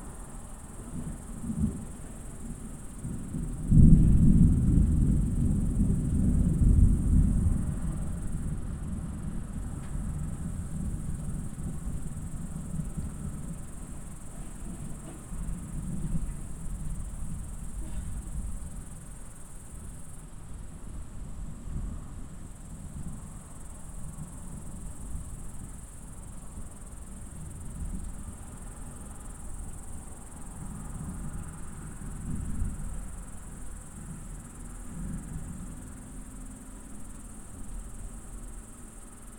Passu, Räpina, Estonia - impending storm
rolling thunder of an impending storm moving over the farmland outside of the town of räpina, recorded from our balcony at dusk.
Põlva County, Estonia, 12 September 2012